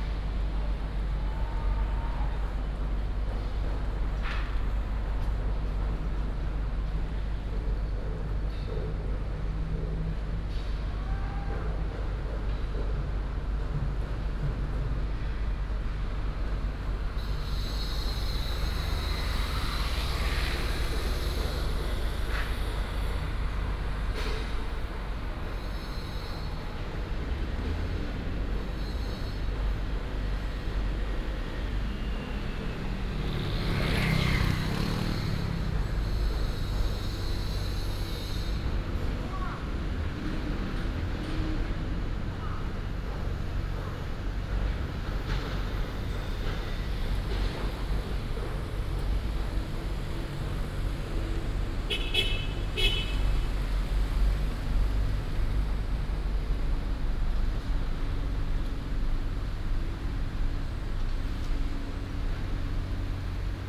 金城一路52巷, East Dist., Hsinchu City - Next to the construction site
Next to the construction site, traffic sound, Binaural recordings, Sony PCM D100+ Soundman OKM II